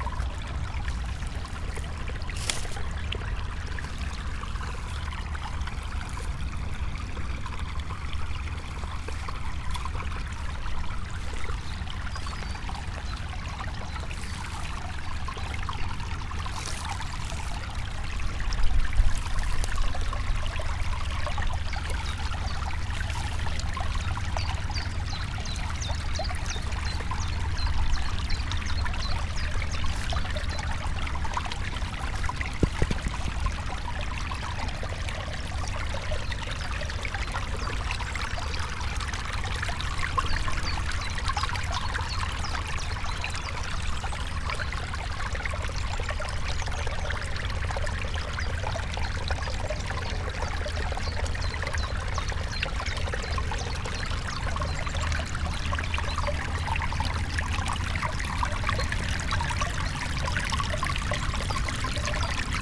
old river bed, drava, melje, maribor - stream spring poema
spring waters, brush and stones, flock of fish ... as if they contemplate how to get from the backwaters to the old riverbed